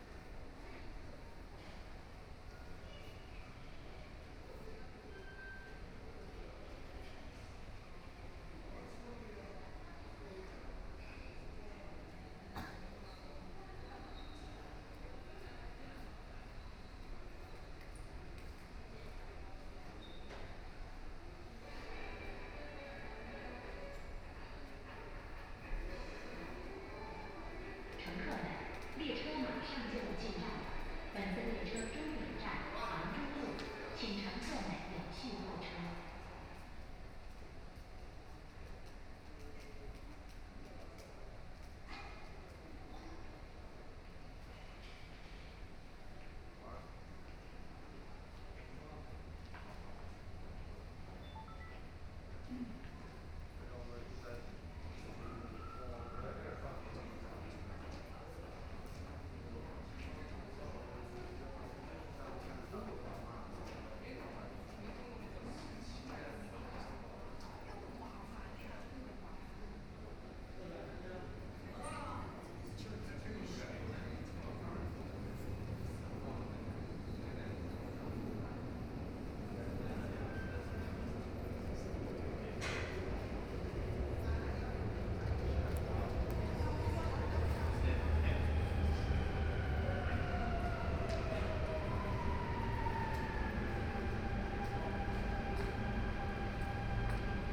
Siping Road, Yangpu District - Line 10(Shanghai metro)
from Wujiaochang station to Siping Road station, Binaural recording, Zoom H6+ Soundman OKM II
Shanghai, China, 23 November 2013, 09:58